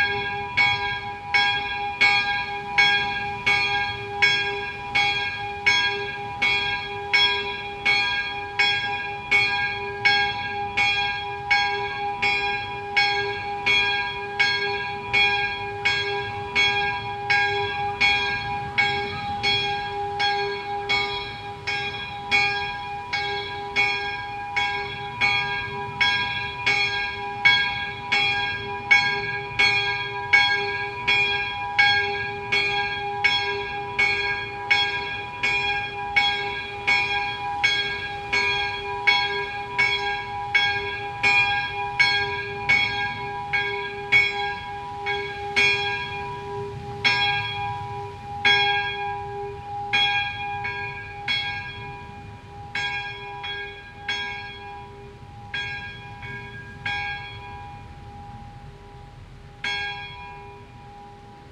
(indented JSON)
{
  "title": "Longsdorf, Tandel, Luxemburg - Longsdorf, Ermitage, bells",
  "date": "2012-08-07 12:00:00",
  "description": "An der Longsdorfer Ermitage an einem windigen Sommer Tag. Der Klang der 12 Uhr Glocken.Im Hintergrund Kinderstimmen.\nAt the Longsdorf Ermitage on windy summer day. The sound of the 12 o clock bells. In the background voices of childen.",
  "latitude": "49.90",
  "longitude": "6.21",
  "altitude": "303",
  "timezone": "Europe/Luxembourg"
}